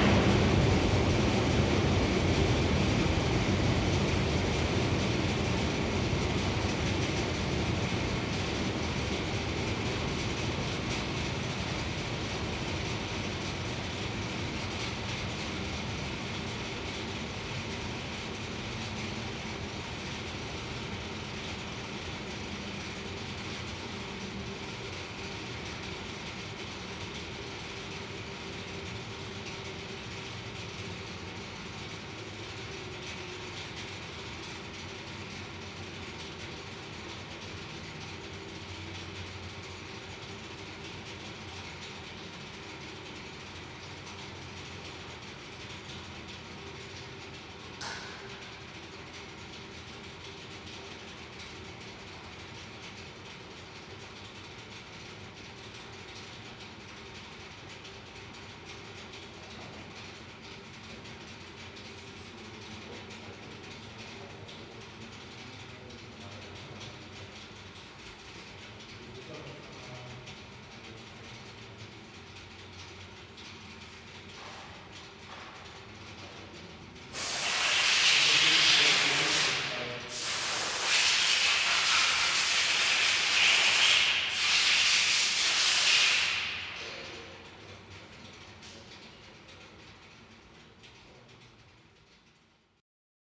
Fachgebiet Bionik und Evolutionstechnik, Technische Universität Berlin, Ackerstraße, Berlin - Great wind tunnel spinning up and down.
The department for bionics and evolutionary technology of Technische Universität Berlin is located in the former AEG building. You can hear the great wind tunnel spinning up and down. Many thanks to Dipl.-Ing. Michael Stache for his kind admission.
[I used an MD recorder with binaural microphones Soundman OKM II AVPOP A3]